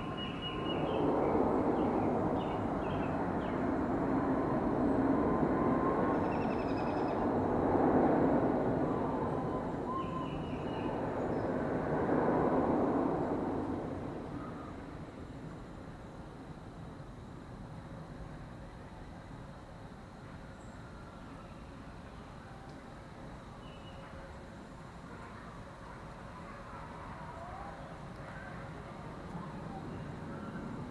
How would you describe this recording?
As you descend this trail in the woods you would think something sinister is occurring off in the distance. Rest assured it is just sounds of delight from Six Flags Amusement Park and most prominently the Screamin’ Eagle roller coaster. When it opened in 1976 for America's Bicentennial it was noted by the Guinness Book of World Records to be the largest and fastest wooden roller coaster. The DeClue trail is in Greensfelder County Park part of the Henry Shaw Ozark Corridor.